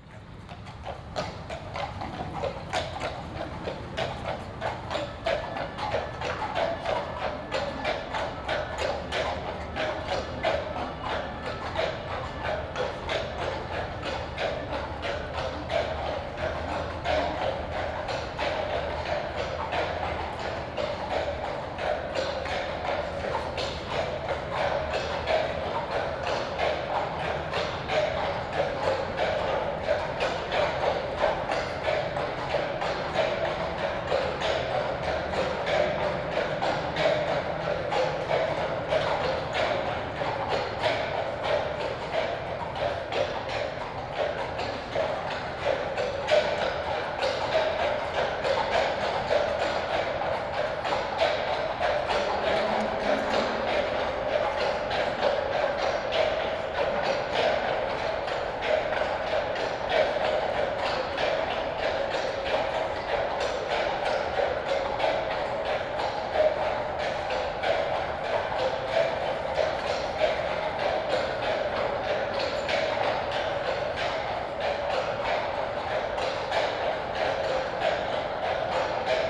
Tunnel at Paris, Francja - (376) BI Horses in a tunnel
Binaural recording of a horse patrol walking into a tunnel.
Sony PCM-D100, Soundman OKM
Île-de-France, France métropolitaine, France, 25 September 2018, 17:08